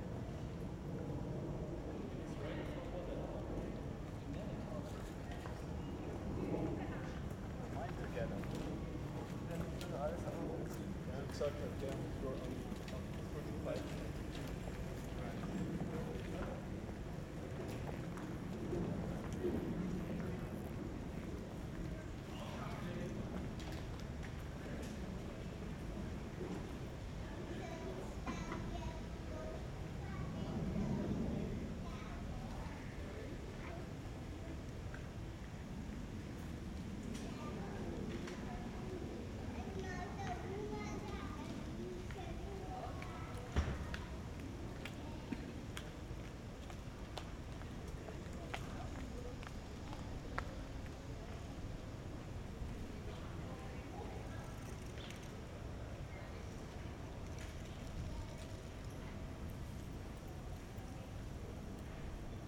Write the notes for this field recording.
Spielende Kinder, Handwerker, Linienflugzeug, Passanten, Kinderwagen über Pflastersteine, Glockenschlag 17:00 Uhr von Kirche St Peter und Fraumünster, kl. Flugzeug, Rollkoffer über Pflastersteine.